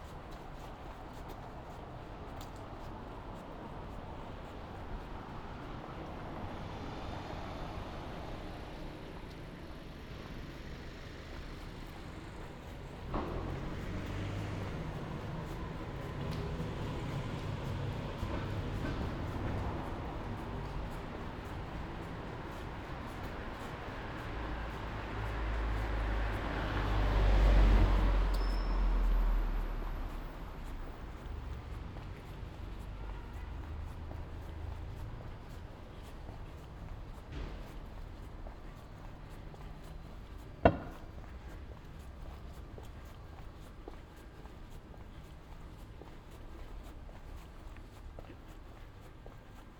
Ascolto il tuo cuore, città. I listen to your heart. Fall - Monday night walk in San Salvario, before first curfew night, in the time of COVID19: Soundwalk
"Monday night walk in San Salvario, before first curfew night, in the time of COVID19": Soundwalk
Monday, October 26th 2020: first night of curfew at 11 p.m. for COVID-19 pandemic emergence. Round trip walking from my home in San Salvario district. Similar path as in previous Chapters.
Start at 10:47 p.m. end at 11:26 p.m. duration 38’40”
Path is associated with synchronized GPS track recorded in the (kmz, kml, gpx) files downloadable here: